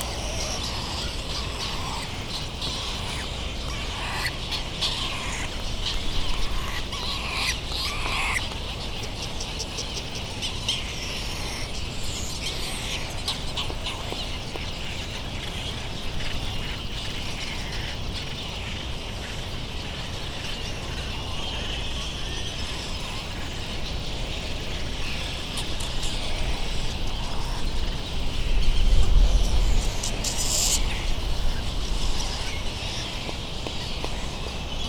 Soundscape ... Sand Island ... Midway Atoll ... bird calls from laysan albatross ... bonin petrels ... white terns ... black noddy ... wind thru iron wood trees ... darkness has fallen and bonin petrels arrive in their thousands ... open lavalier mics on mini tripod ...